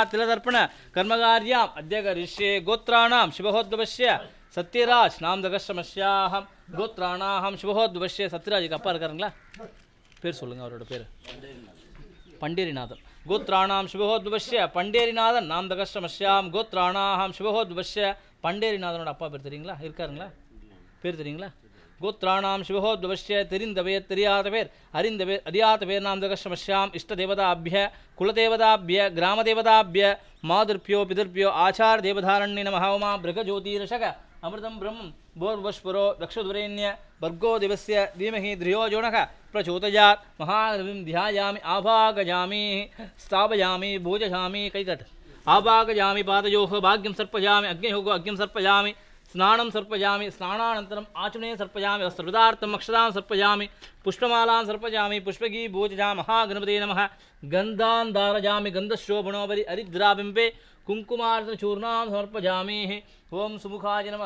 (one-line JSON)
{"title": "Eswaran Dharmaraja Kovil St, near Iyengar Bakery, Heritage Town, Puducherry, Inde - Pondicherry - Shri Vedapuriswarar Temple", "date": "2008-05-01 16:00:00", "description": "Pondicherry - Shri Vedapuriswarar Temple\nBénédiction", "latitude": "11.94", "longitude": "79.83", "altitude": "10", "timezone": "Asia/Kolkata"}